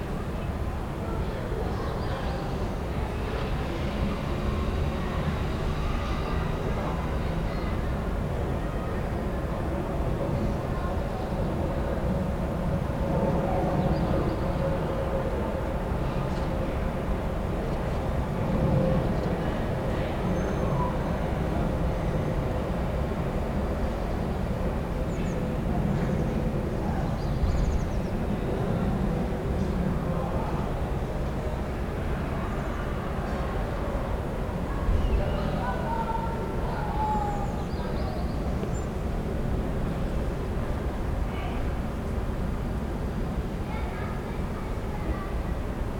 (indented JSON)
{
  "title": "Mitte, rooftop ambience",
  "date": "2010-09-22 14:30:00",
  "description": "ambient sounds in Berlin Mitte",
  "latitude": "52.52",
  "longitude": "13.41",
  "altitude": "44",
  "timezone": "Europe/Berlin"
}